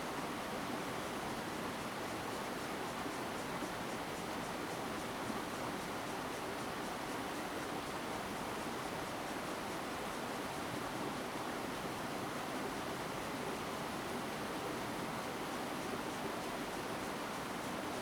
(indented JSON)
{
  "title": "奇岩橋, Rueisuei Township - Stream",
  "date": "2014-10-09 13:45:00",
  "description": "Stream\nZoom H2n MS+XY",
  "latitude": "23.49",
  "longitude": "121.47",
  "altitude": "81",
  "timezone": "Asia/Taipei"
}